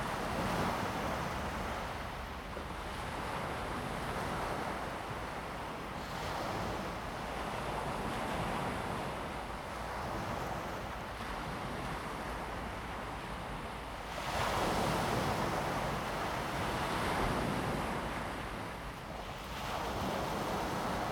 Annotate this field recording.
Late night seaside, traffic sound, Sound of the waves, Zoom H2n MS+XY